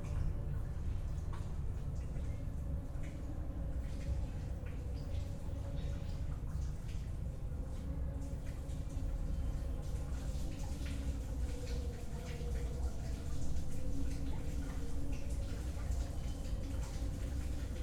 Berlin Bürknerstr., backyard window - distant music, dripping water
dripping water from my neighbour's bathroom, distant sounds from a music festival, Saturday evening in my backyard
(SD702, 2xNT1)
Berlin, Germany